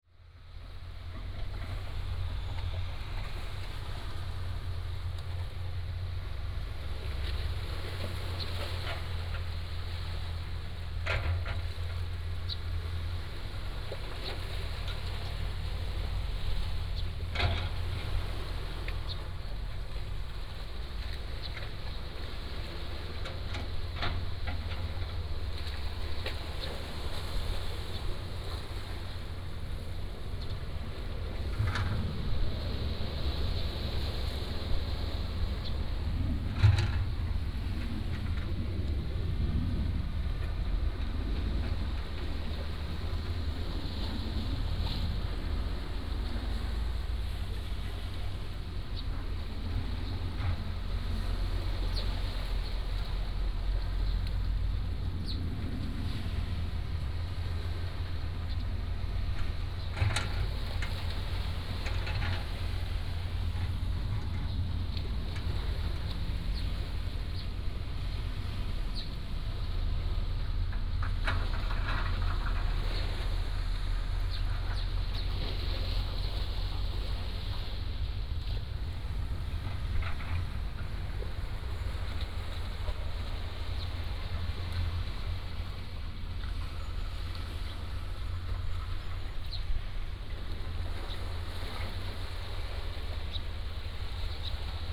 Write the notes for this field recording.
On the coast, Sound of the waves, Traffic Sound, Birds singing, Excavators, Aircraft flying through